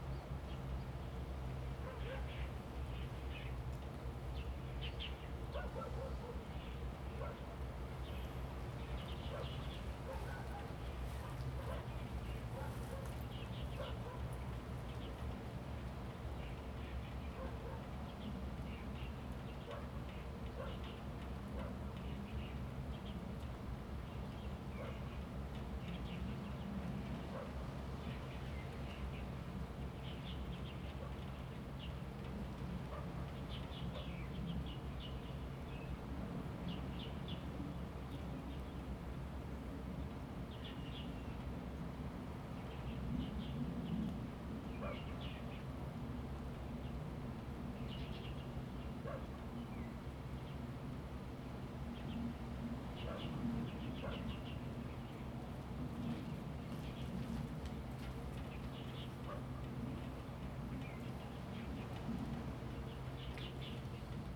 Fishing village, In the dike above, Dog barking, Bird calls, Rainy days
Zoom H2n MS+XY
Linyuan District, 港嘴堤防201號, 22 November 2016, 11:54